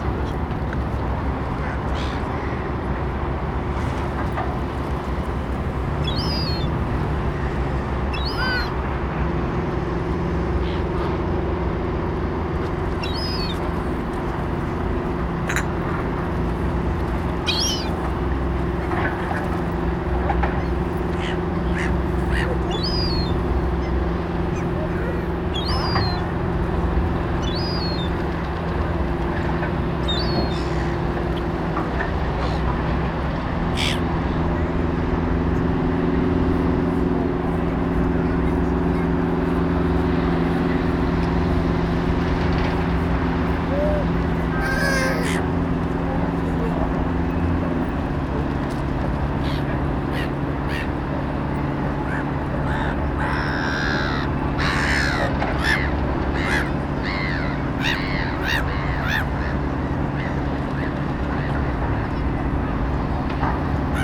Oslo, Opera House [hatoriyumi] - Gabbiani, bambini e traffico lontano
Gabbiani, bambini e traffico lontano